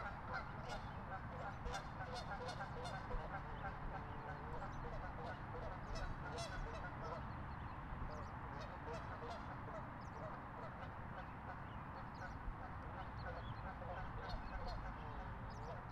Pikes Peak Greenway Trail, Colorado Springs, CO, USA - GeeseMemorialValleyPark27April2018
A flock of geese honking and fighting on a pond